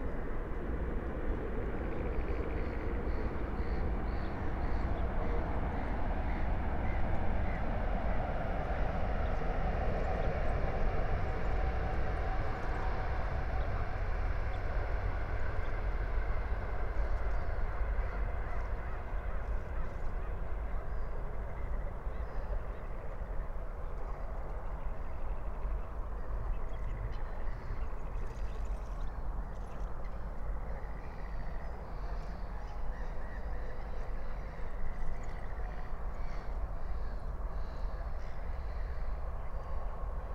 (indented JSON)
{
  "date": "2022-05-29 22:50:00",
  "description": "22:50 Berlin, Buch, Moorlinse - pond, wetland ambience",
  "latitude": "52.63",
  "longitude": "13.49",
  "altitude": "51",
  "timezone": "Europe/Berlin"
}